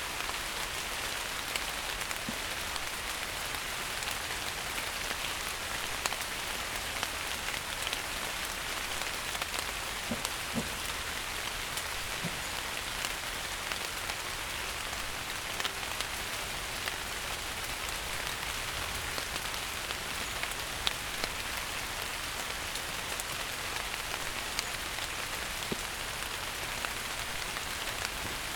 Tranekær, Danmark - Langeland sound of rain on leaves
Rain on leaves early morning. Recorded with Zoom H6. Øivind Weingaarde.